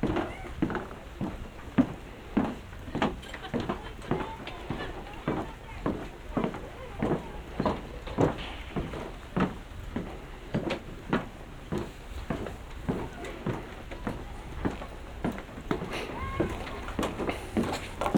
short soundwalk over marina berth
the city, the country & me: august 4, 2012